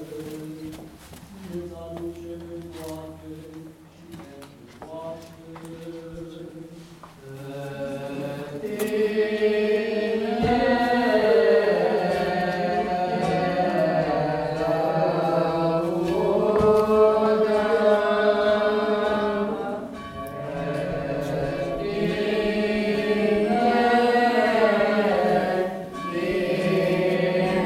Sunday Mass at an Orthodox church in a small village. Recording made with a Zoom h2n.
Romania